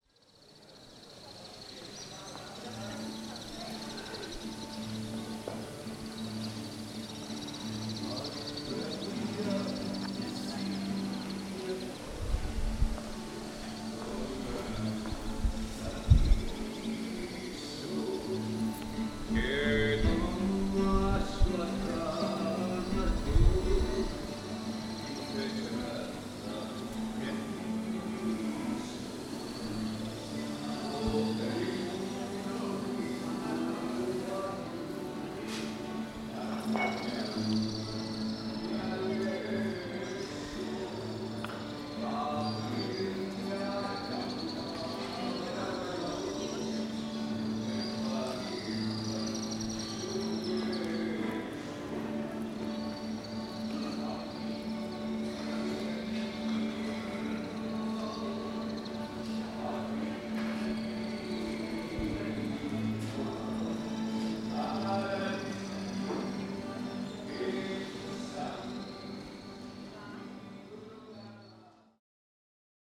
Nikiforou Theotoki, Corfu, Greece - Iroon Square - Πλατεία Ηρώων (Πλακάδα Αγίου Σπυρίδωνα)
A street musician plays the guitar and sings traditional Corfiot songs and mixing with the birdsongs.